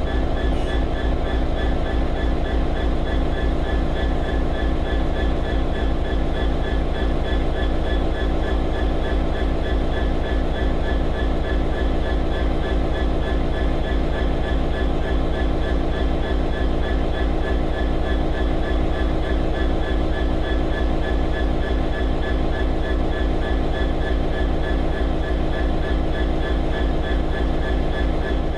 Class 66 diesel engine, idling
Class 66 diesel engine of Belgian private rail operator DLC idling in cold weather at the Montzen freight station. Behringer B2 Pro and Zoom H4.
Plombières, Belgium, 14 November 2008